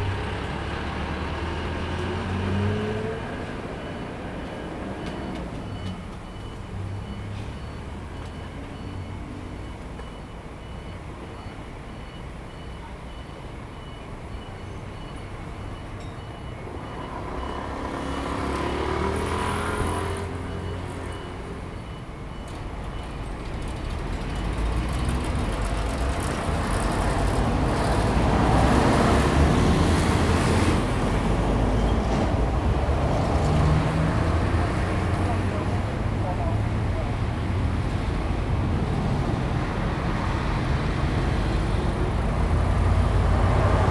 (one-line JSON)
{"title": "Zhishan Rd., Taimali Township - Street corner", "date": "2014-09-05 10:58:00", "description": "Street corner, next to the convenience store, Parking\nZoom H2n MS +XY", "latitude": "22.61", "longitude": "121.01", "altitude": "15", "timezone": "Asia/Taipei"}